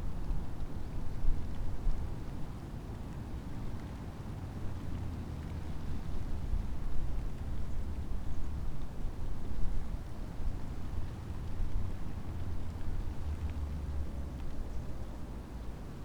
penkun: cemetery - the city, the country & me: tree with ivy growing up the trunk
ivy leaves fluttering in the wind
the city, the country & me: january 3, 2014